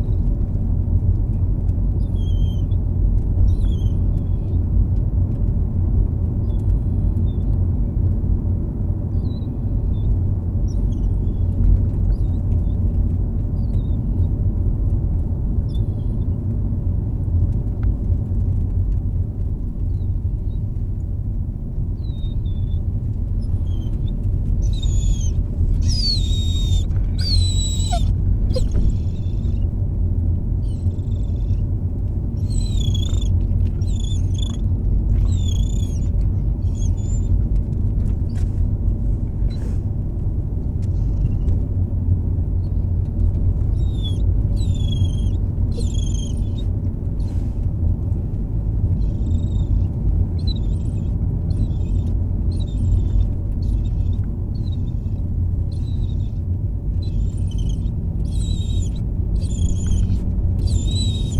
Filey, UK - the dog goes to the beach ...
The dog goes to the beach ... very occasionally we have a dog we take to the beach ... it's a rarity for her ... she gets excited and whimpers ... whines ... trills ... chirrups etc ... the whole way in the back of the car ... recorded with Olympus LS 11 integral mics ...
12 October 2016